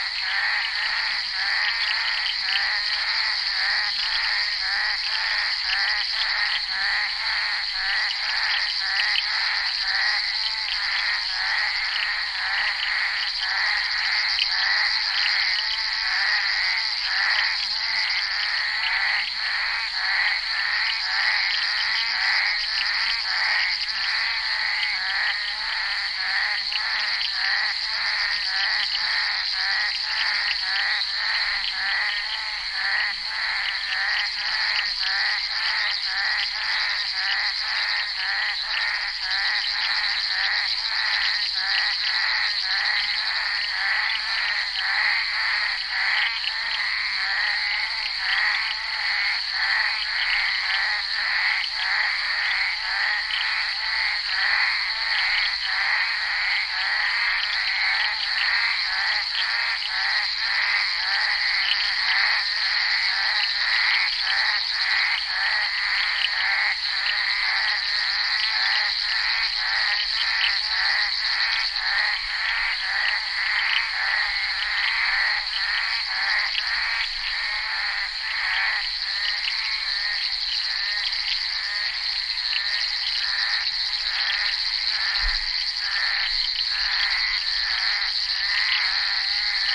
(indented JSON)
{
  "title": "crapaux buffle",
  "description": "enregistré sur le tournage Bal poussière dhenri duparc en février 1988",
  "latitude": "5.29",
  "longitude": "-3.29",
  "timezone": "Europe/Berlin"
}